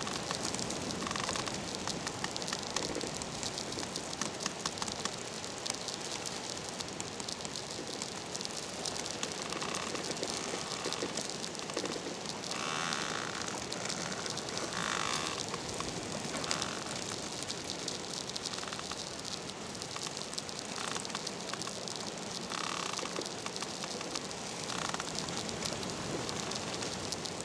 a bush creaking in a wind (omni mics), and VLF sparkling in the air